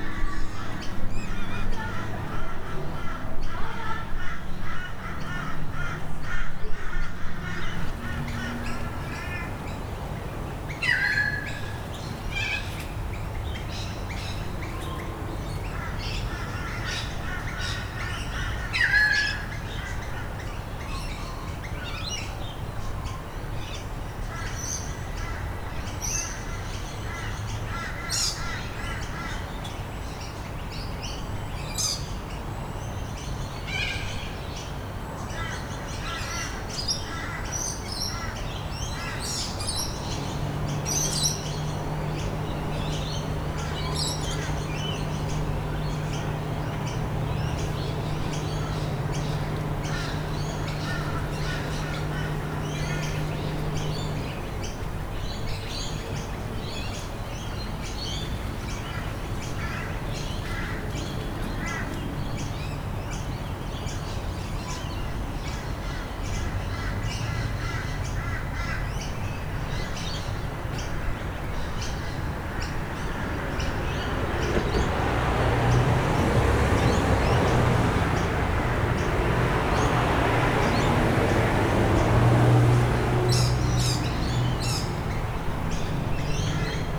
Currumbin QLD, Australia - Morning sounds in the garden
This was recorded in my garden in the morning in March. It was a warm, slightly overcast & windy morning. Birds, kids walking to school, traffic, wind chimes and a moth flying passed the microphone at 1:42 and 1:48. Recorded on a Zoom H4N.
27 March 2015, 08:45